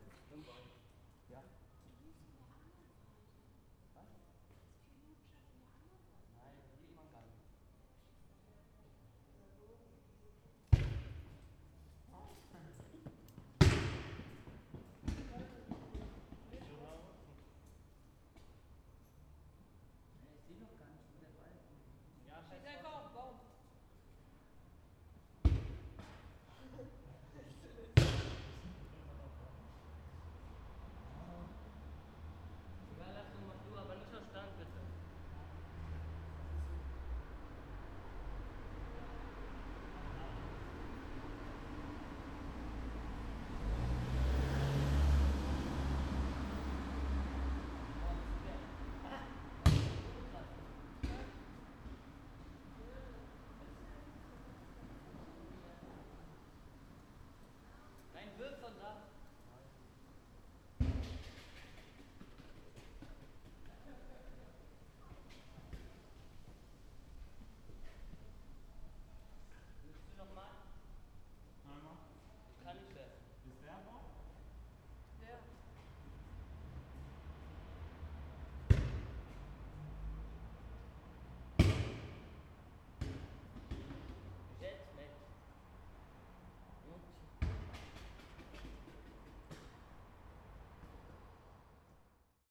berlin, bürknerstraße: in front of radio aporee - night soccer in the street
some youngsters playing soccer at night in front of my window. they try to hit something in the tree by kicking the ball.
Berlin, Germany